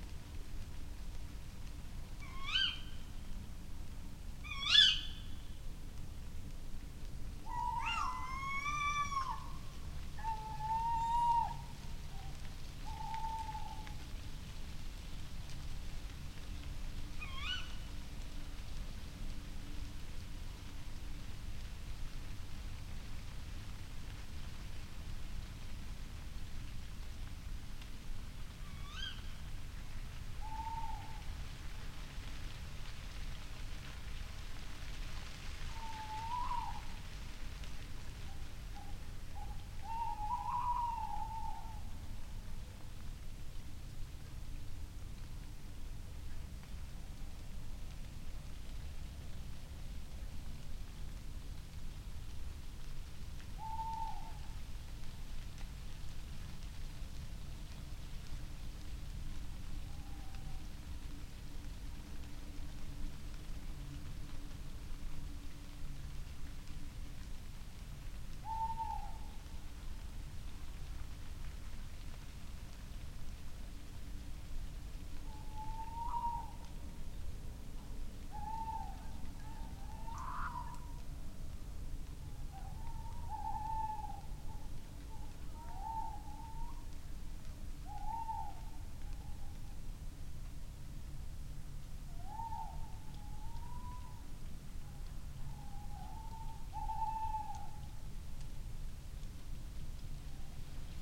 Unnamed Road, Malton, UK - tawny owl calls ...
tawny owl calls ... SASS on tripod ... bird calls ... little owl ... back ground noise ... rustling leaves ... taken from extended recording ...